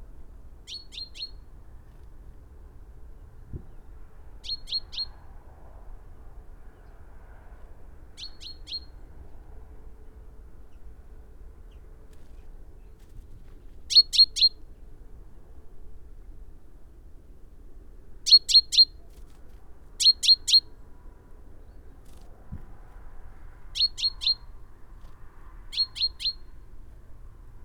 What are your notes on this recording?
Great tit territory ... calls and song from a bird as the breeding season approaches ... lavalier mics in a parabolic ... background noise ...